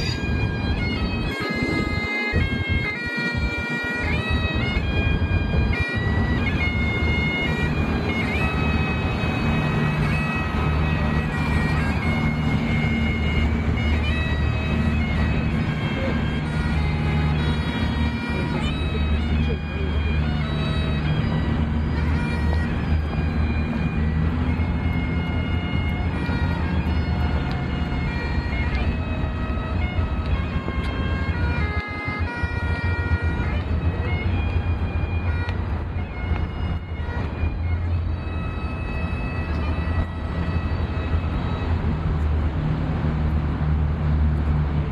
walk across embankment bridge
Screeching train with its grinding of brakes. Then halfway across busker playing bagpipes.
16 May, ~15:00